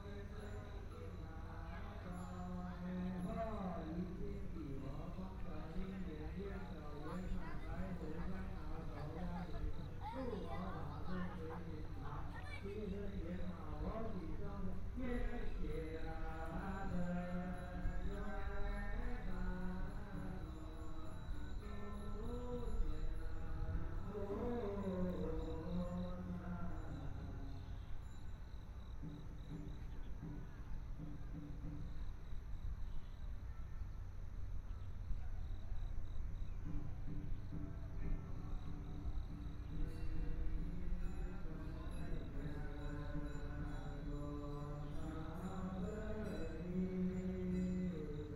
台北市中山區圓山里 - Standing next to the temple
Standing next to the temple, Temple chanting voices, Aircraft flying through, Birds singing, Binaural recordings, Zoom H4n+ Soundman OKM II